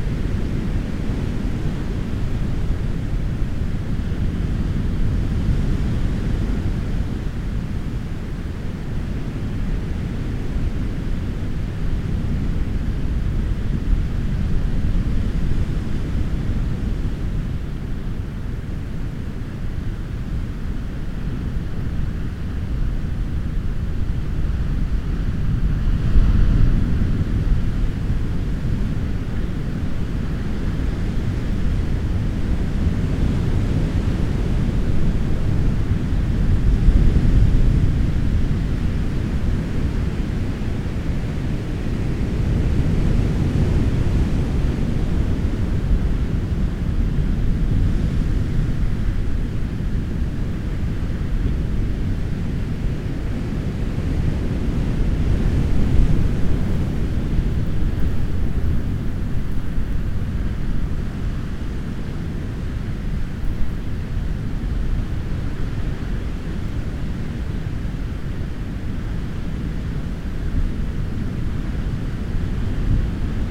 Tintagel, Cornwall, UK - Waves at Tintagel Bay
Recorded with a Zoom H4N and electret microphones placed down a rabbit hole.